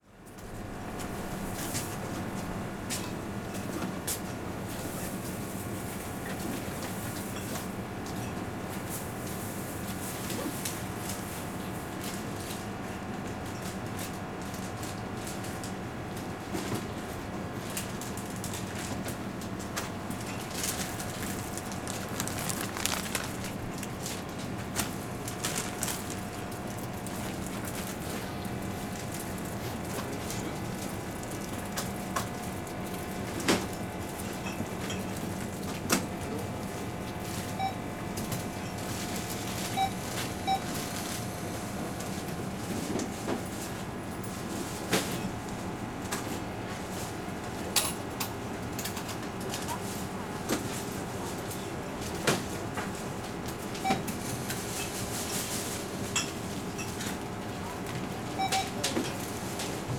köln, brüsseler str. - small supermarket
evening, small supermarket, entrance area
Cologne, Germany, 1 December 2010